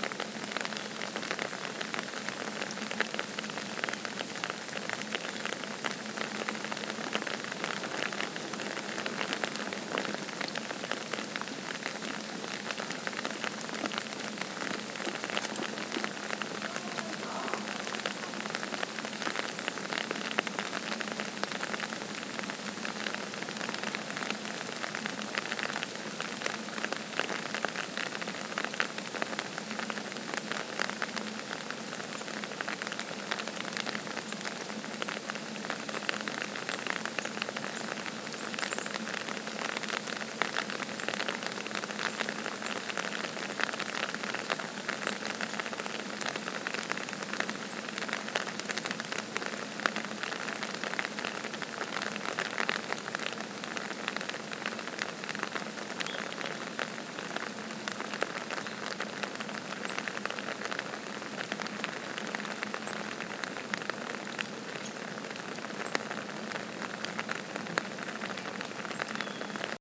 New Paltz, NY, USA - Rain Under Trees
The trees in front of College Hall at SUNY New Paltz are a great gathering area for students to gain some shade from the sun. This recording was taken during a rainy day to capture the natural sounds surrounding College Hall. The recording was taken using a Snowball condenser microphone, under an umbrella, and edited using Garage Band on a MacBook Pro.